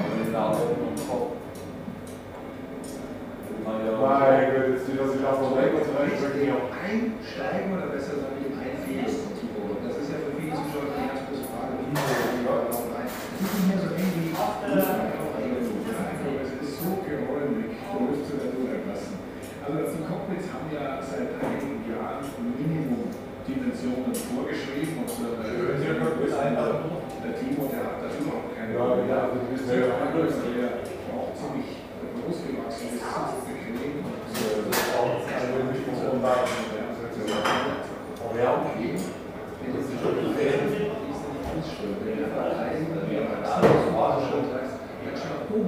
dresden airport, gambling joint
dresden airport, gambling & internet joint.
recorded apr 26th, 2009.
Eads EFW, Dresden, Germany